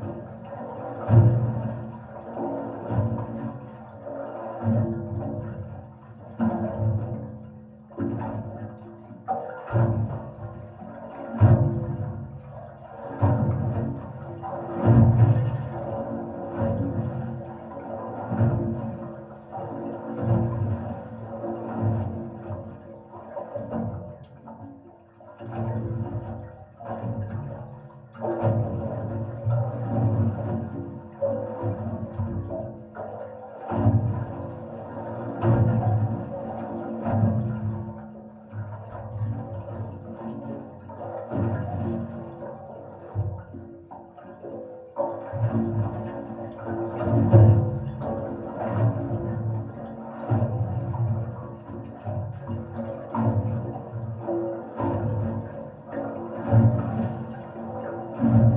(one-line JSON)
{"title": "Rostrevor, N. Ireland - Tide Coming In Over Metal Stairs", "date": "2016-02-18 16:45:00", "description": "Recorded with a pair of JrF contact mics and a Marantz PMD661", "latitude": "54.10", "longitude": "-6.19", "altitude": "96", "timezone": "Europe/London"}